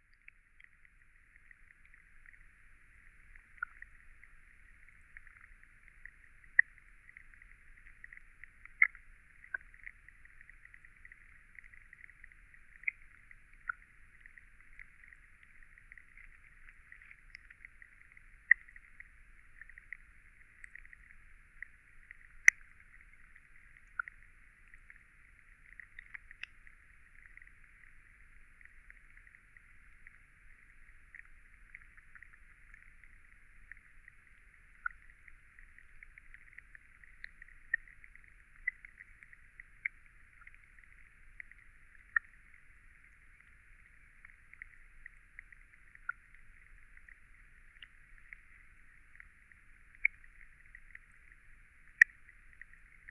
Cape Farewell Hub The WaterShed, Sydling St Nicholas, Dorchester, UK - Sydling Pond :: Below the Surface 1
The WaterShed - an ecologically designed, experimental station for climate-focused residencies and Cape Farewell's HQ in Dorset.